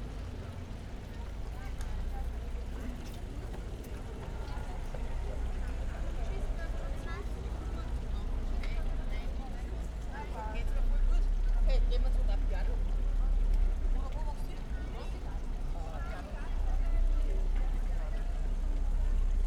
Linz, Taubenmarkt - street ambience /w sound installation
street ambience at Taubenmarkt Linz, trams, cars, people passing, a fountain, a sound installation
(Sony PCM D50, Primo EM172)
Oberösterreich, Österreich